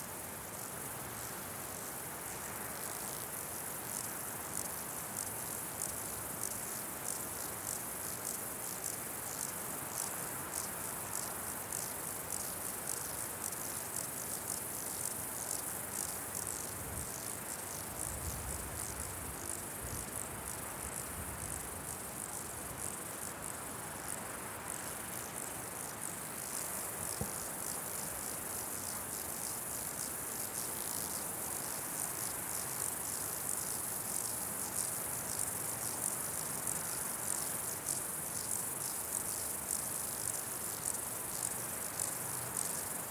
Bonneval-sur-Arc, France - Torrent in the Alps
Mountain Alps summe locusts and air and torrent Arc.
by F Fayard - PostProdChahut
Tascam DR44
France métropolitaine, France, August 2016